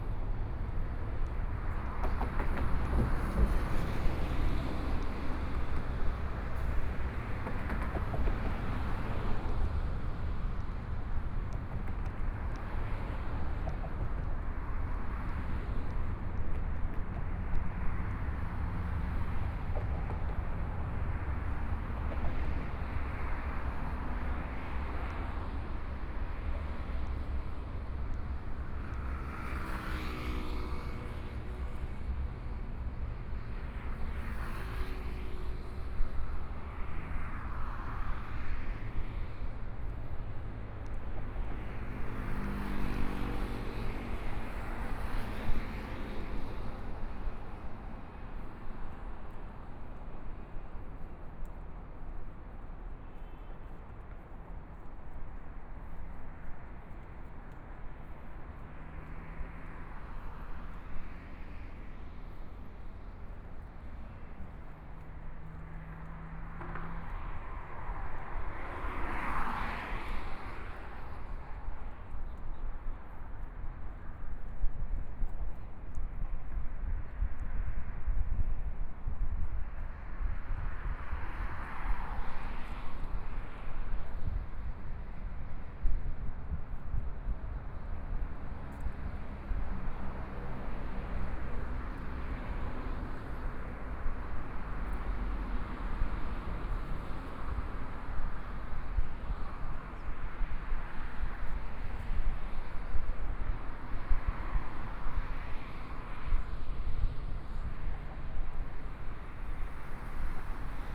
Traffic Sound, Walking on the bridge, Sunny mild weather
Please turn up the volume
Binaural recordings, Zoom H4n+ Soundman OKM II
Taipei City, Zhongshan District, 大直橋(明水路), 16 February 2014